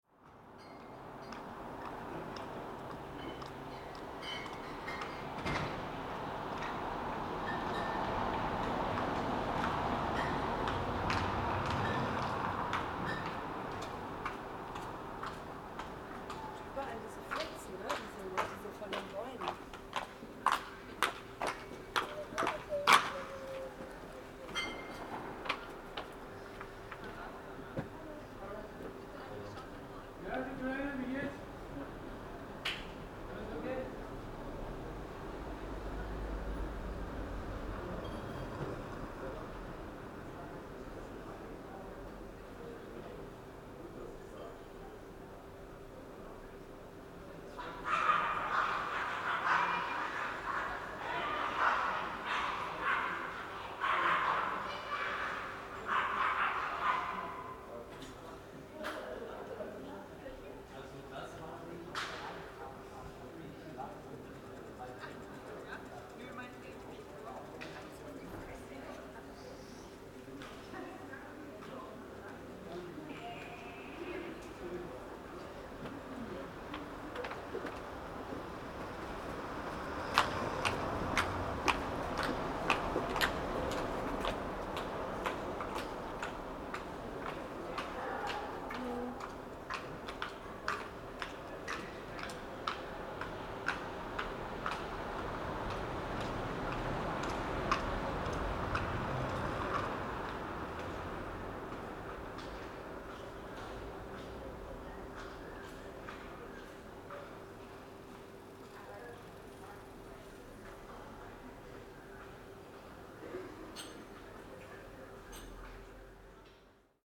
25.05.2009 22:00 kiosk, fußgänger, kleine hunde
empty street, pedestrians passing by, little dogs fighting